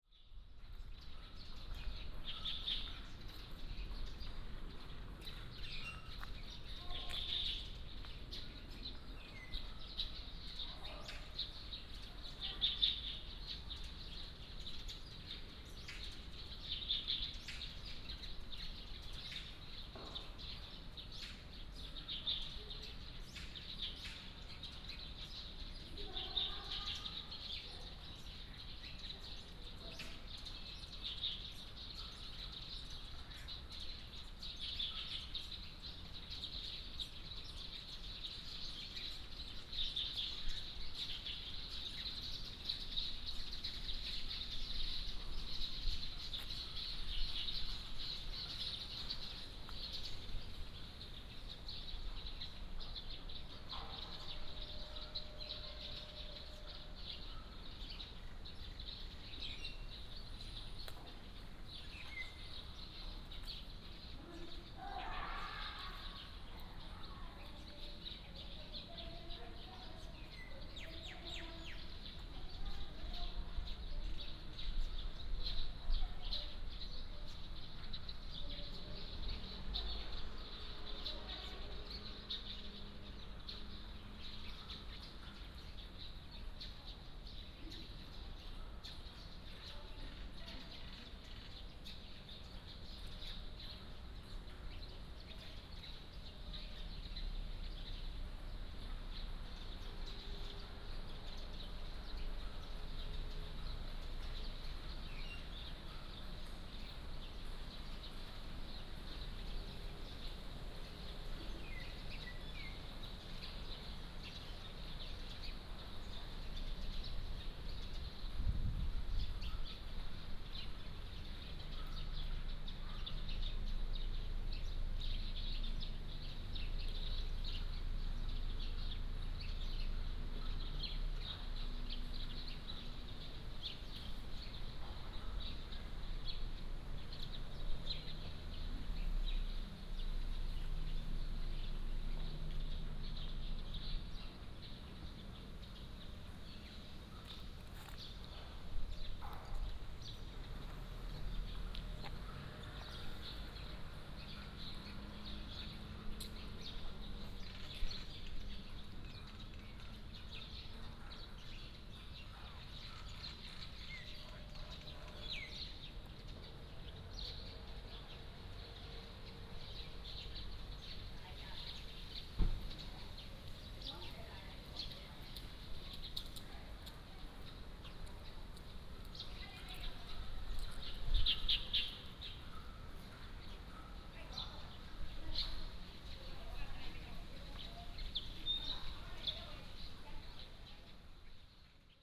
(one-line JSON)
{"title": "大恩公園, Tainan City - in the Park", "date": "2017-02-18 14:40:00", "description": "The sound of birds, Playing snooker", "latitude": "22.97", "longitude": "120.21", "altitude": "26", "timezone": "GMT+1"}